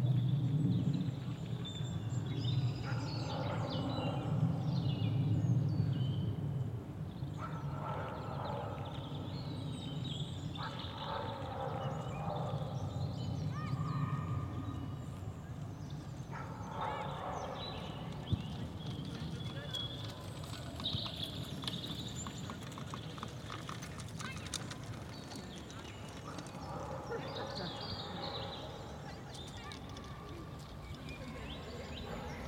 Sounds (birdsong, people shouting, dogs barking) generated on the English side of the River Wye, crossing the river and border into Wales, hitting the hill on the Welsh side of the river and bouncing back into England.
(Audio Technica BP4025 XY mic into a Sound Devices 633 recorder)
Monmouth, Herefordshire, UK, 25 March 2016